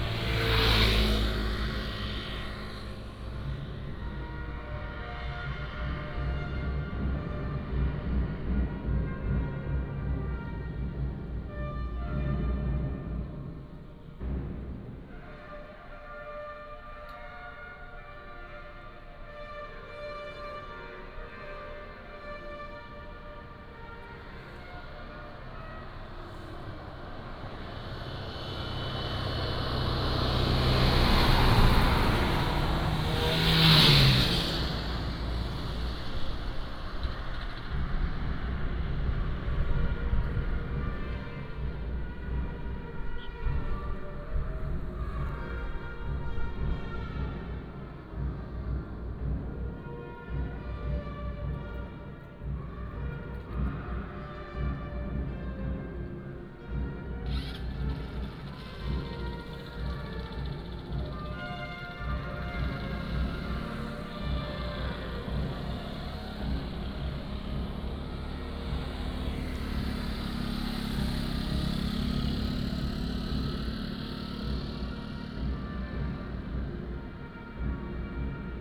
湖西村, Huxi Township - on the Road
In the street, Close to schools, Traffic Sound, Came the voice of the school orchestra
Husi Township, 202縣道, 21 October 2014